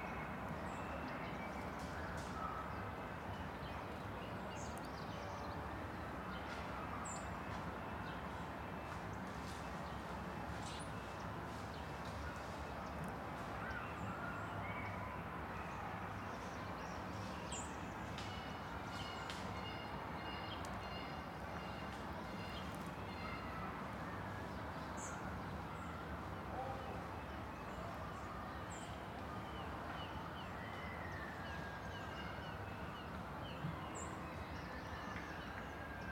Prospect Park - Early Morning at Prospct Park